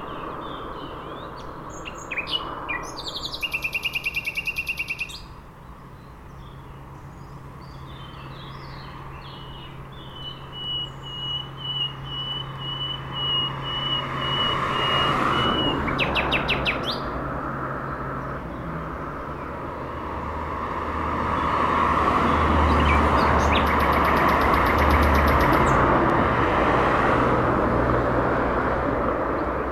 Entrelacs, France - Rossignol ferroviaire
Un rossignol chante dans un buisson entre la voie ferrée et les bords du lac du Bourget nullement effrayé par les passages de trains. Circulation des véhicules, sur la RD991 quelques instants laissés à l'expression naturelle.
France métropolitaine, France